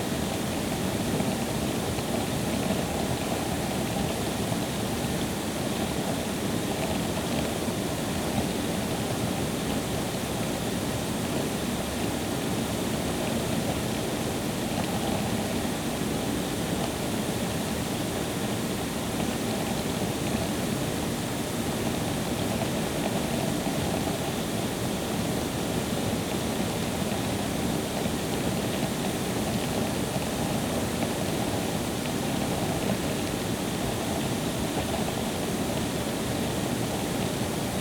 Königsheide, Südostallee, Berlin - ground water treatment plant
different part/position of the plant (using a 4m boom pole over the fence)
(Tascam DR-100 MKIII, SuperLux S502 ORTF)